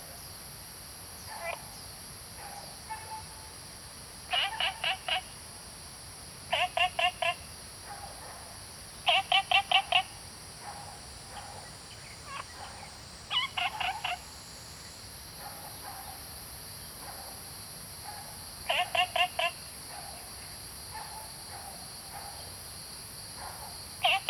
Frogs chirping, Cicada sounds, Birds singing, Small ecological pool
Zoom H2n MS+XY